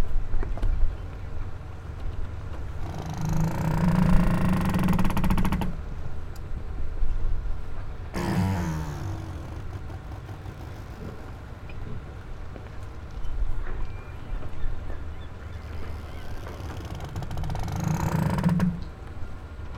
Washington St, Newport, RI, USA - Dock Line
Sound from a dock line.
Zoom h6
Newport County, Rhode Island, United States of America, 1 September 2019